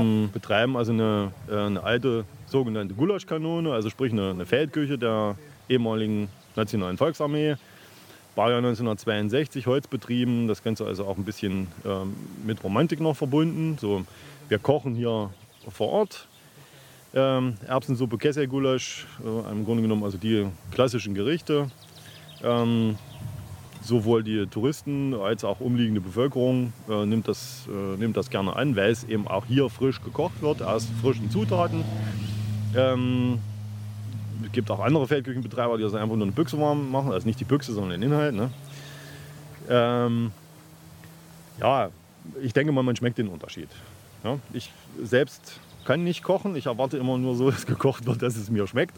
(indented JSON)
{
  "title": "an der b 4 - feldkueche",
  "date": "2009-08-08 22:30:00",
  "description": "Produktion: Deutschlandradio Kultur/Norddeutscher Rundfunk 2009",
  "latitude": "51.64",
  "longitude": "10.70",
  "altitude": "583",
  "timezone": "Europe/Berlin"
}